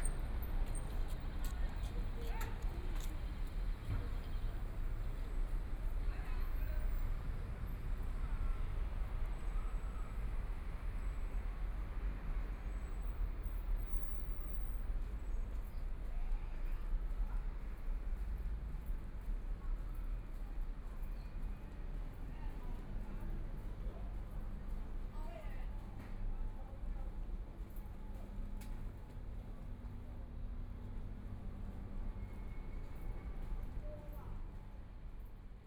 Fangbang Road, Shanghai - in the old district
Walk across the road in the old district, Binaural recording, Zoom H6+ Soundman OKM II